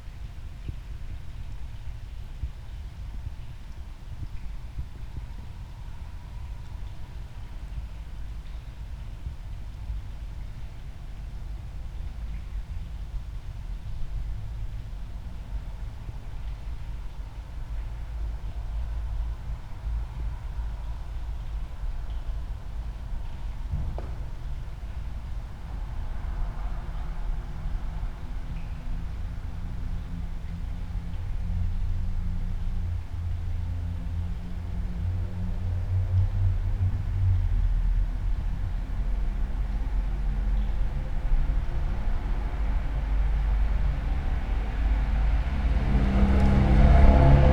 all the mornings of the ... - sept 5 2013 thursday 04:46